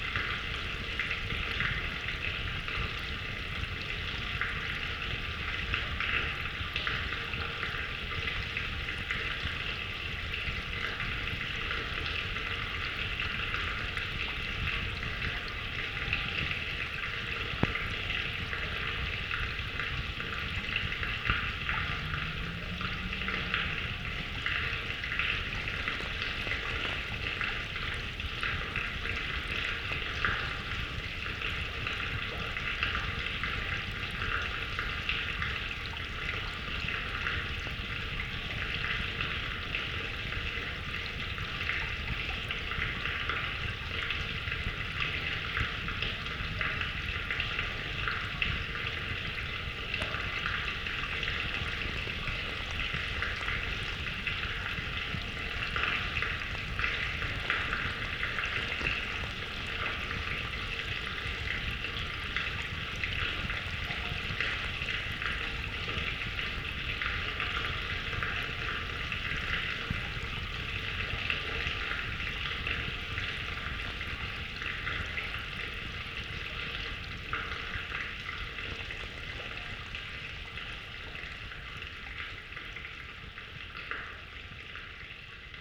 Houtrustweg, Den Haag - hydrophone rec inside a drain
Mic/Recorder: Aquarian H2A / Fostex FR-2LE
The Hague, The Netherlands, 1 May 2009